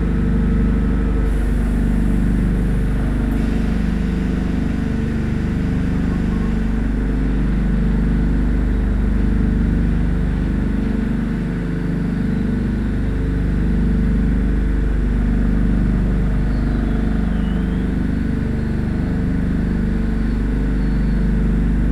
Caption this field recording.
pump of a small sewage treatment plant, the city, the country & me: march 5, 2013